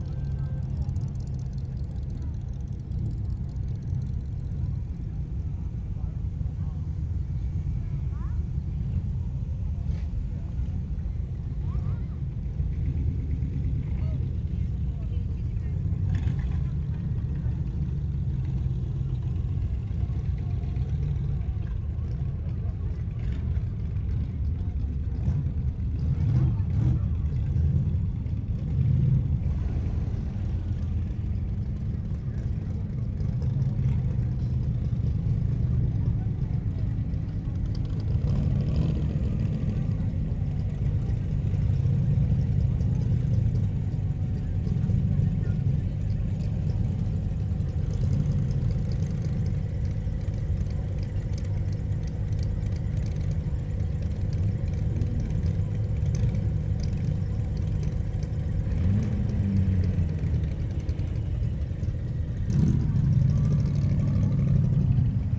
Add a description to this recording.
race the waves ... south prom bridlington ... dpa 4060s clipped to bag to mixpre3 ... cars and bikes moving from car park holding to beach ...